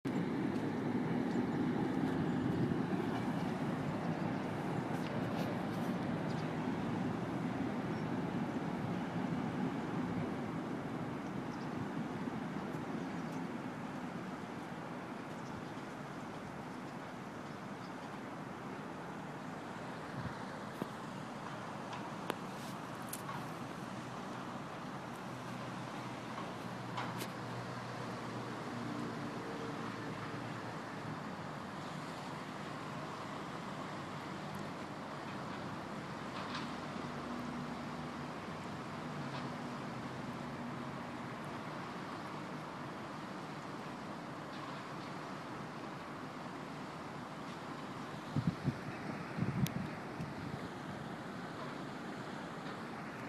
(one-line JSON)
{"title": "Lungotevere degli Artigiani", "date": "2011-03-07 10:17:00", "description": "On the river. Between the rail line bridge and traffic bridge", "latitude": "41.87", "longitude": "12.47", "altitude": "14", "timezone": "Europe/Rome"}